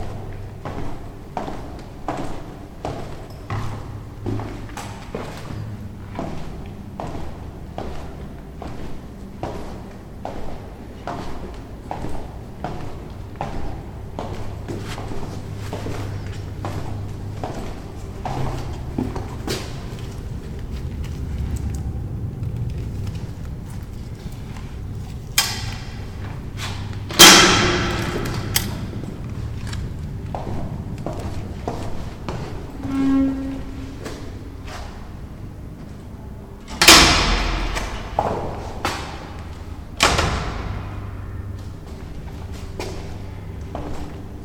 Walking inside the Invalidovna building and leaving to the park outside.
Prague, Czech Republic, 16 June